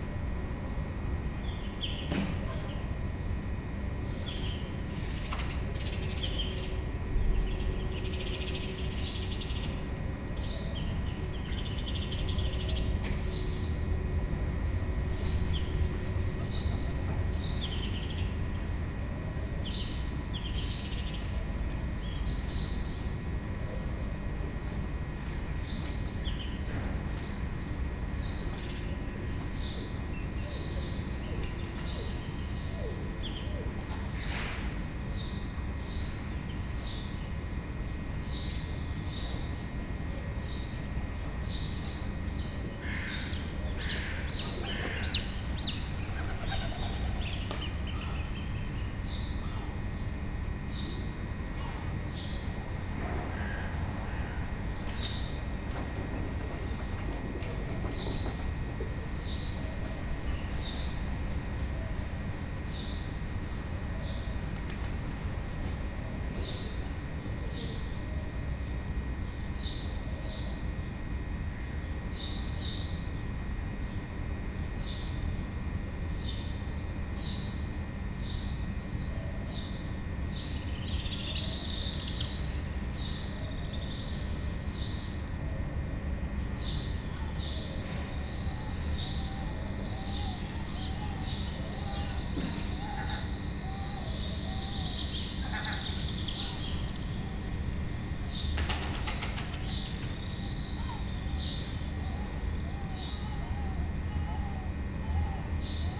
Beyoğlu/Istanbul Province, Turkey - Birds Early morning
Birds and electrical transformer. 2x DPA omni mics, Dat recorder